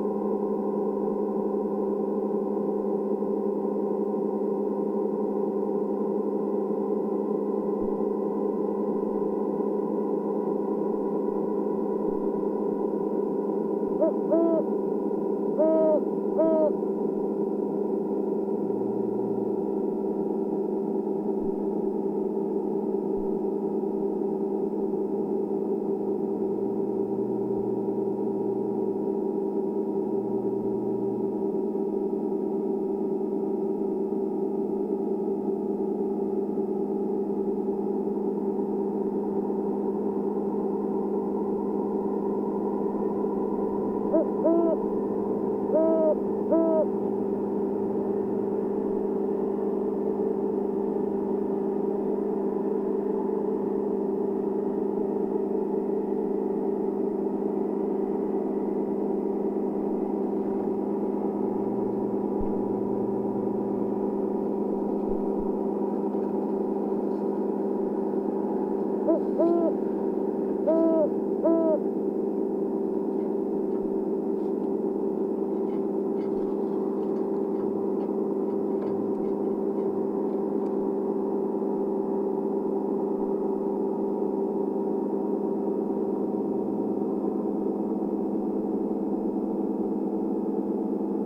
Bartlett, CA, USA - Owl Hooting Near Aeolian Harp
Metabolic Studio Sonic Division Archives:
Recording of a hooting owl inside abandoned factory next to a large silo turned into an Aeolian Harp. Background droning tones are the harp itself which is a series of metal strings running along side the outside of silo. Two microphones are placed near the owl nest and near the aeolian harp/silo
California, United States, 15 June, 02:00